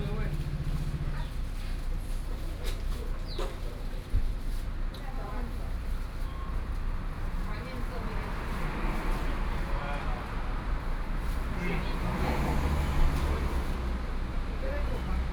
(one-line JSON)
{"title": "東河村, Donghe Township - In the parking lot", "date": "2014-09-06 13:11:00", "description": "In the parking lot, In front of the convenience store, The weather is very hot", "latitude": "22.97", "longitude": "121.30", "altitude": "27", "timezone": "Asia/Taipei"}